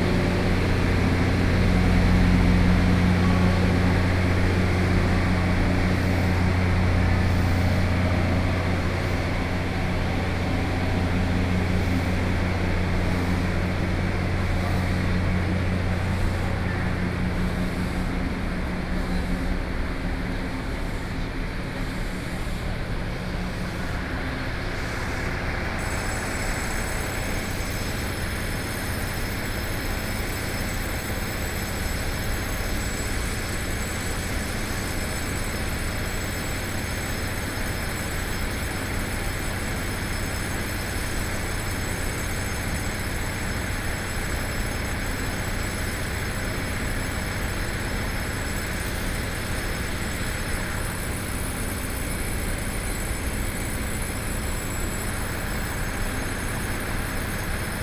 On the platform, Train traveling through, Trains arrive at the station, Binaural recordings, Zoom H4n+ Soundman OKM II
Yilan County, Taiwan, 7 November